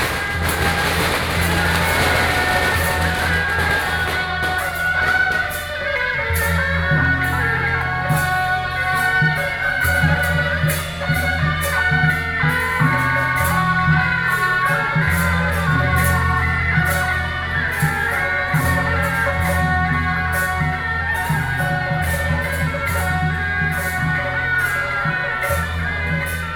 Sec., Xiyuan Rd., Wanhua Dist., Taipei City - Traditional temple festivals
Taipei City, Taiwan, 4 December